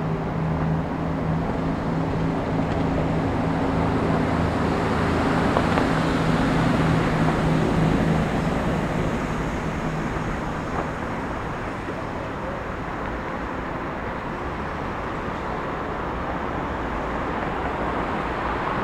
Żołnierska, Olsztyn, Poland - Obserwatorium - Południe
Recorded during audio art workshops "Ucho Miasto" ("Ear City"):